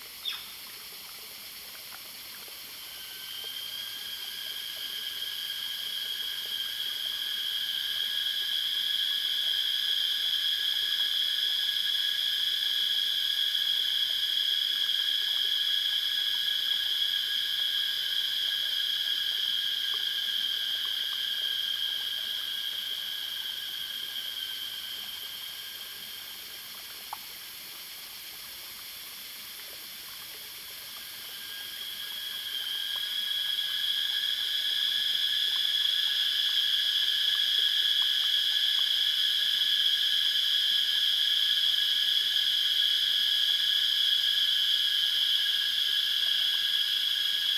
Small streams, Cicada sounds, Bird sounds
Zoom H2n MS+XY
Nantou County, Yuchi Township, 華龍巷43號, 5 May 2016, 15:01